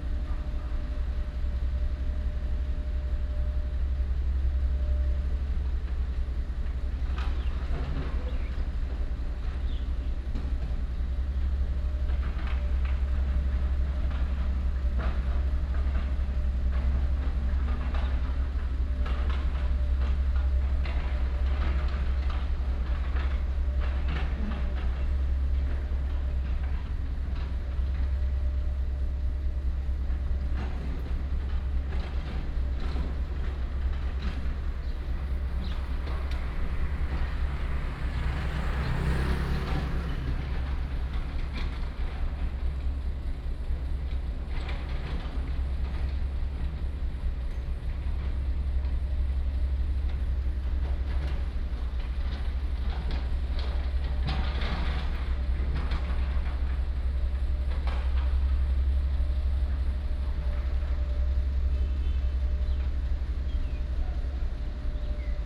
In the alley, The sound of birds, Demolition of old house, traffic sound, Binaural recordings, Sony PCM D100+ Soundman OKM II